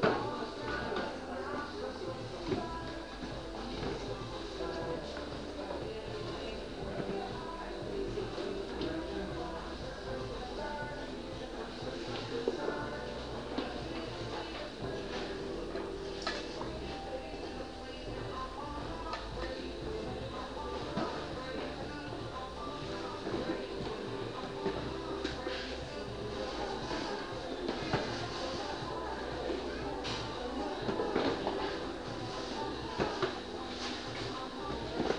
Galaxy Shopping Center, Szczecin, Poland
Galaxy Shopping Center
27 September 2010